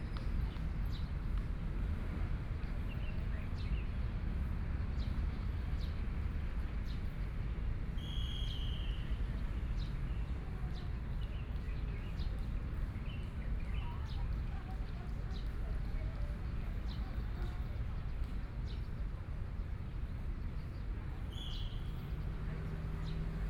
二二八和平公園, Kaoshiung City - in the Park
in the Park